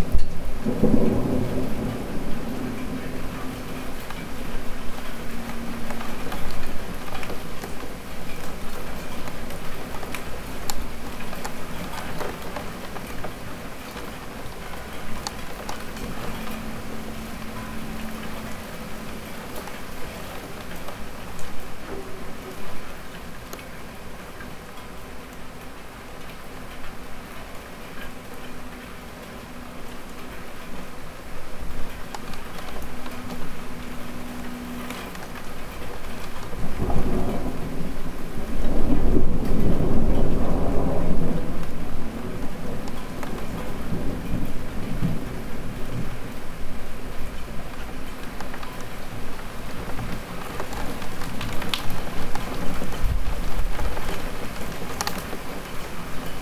Brady Ave, Bozeman, Montana - Thunderstorm gearing up in Bozeman.
From a bedroom windowsill, a thunderstorm rolls across Bozeman.